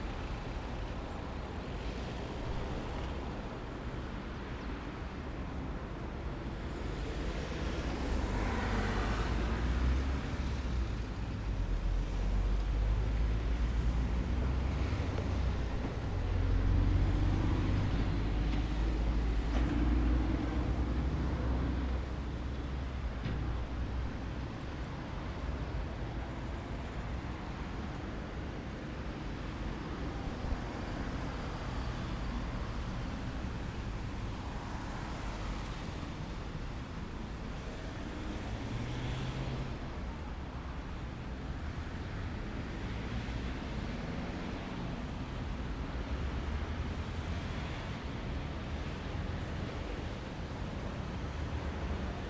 main root between Deutschlandsberg and Graz
Katrin Höllebauer
Lannach, Austria, December 2015